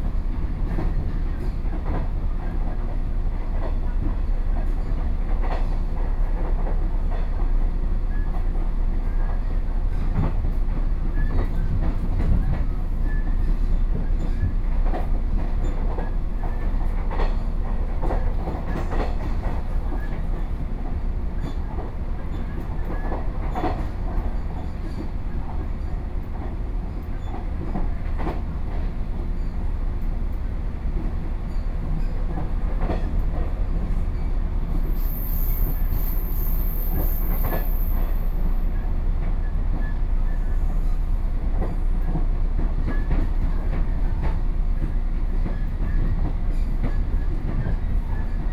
Yangmei City, Taoyuan - In a local train
In a local train, on the train, Binaural recordings